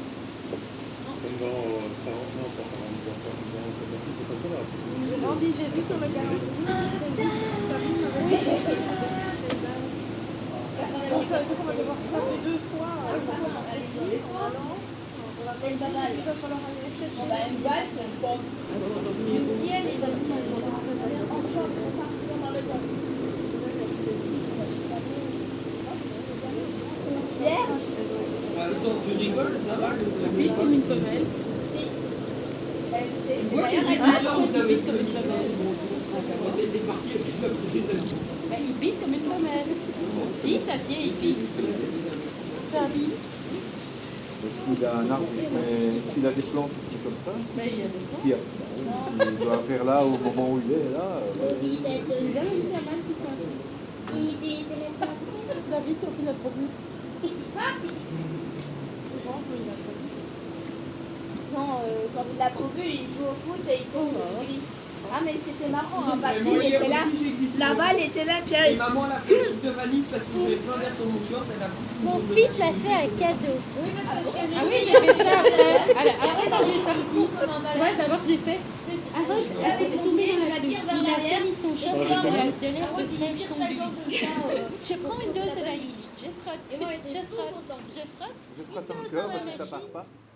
Chaumont-Gistoux, Belgique - In the garden
With the very good weather, people are eating in the garden. Recording of the garden ambiance from the road.
Chaumont-Gistoux, Belgium